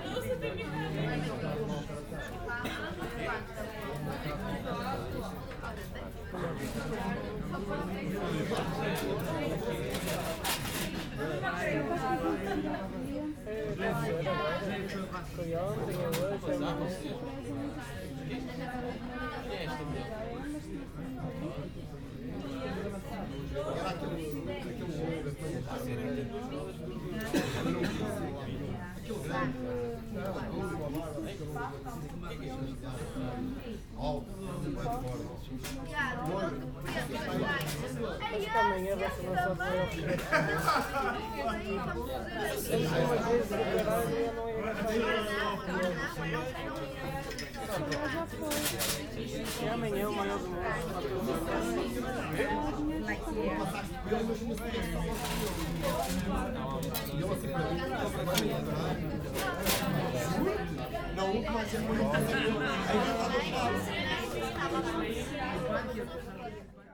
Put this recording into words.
bar at Praça dos Poveiros at night